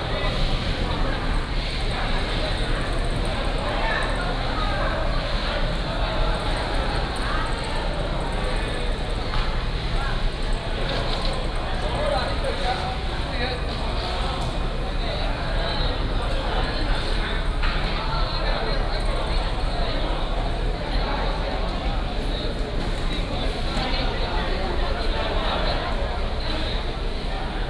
Magong City, Penghu County - in the fish market
in the fish market
Magong City, Penghu County, Taiwan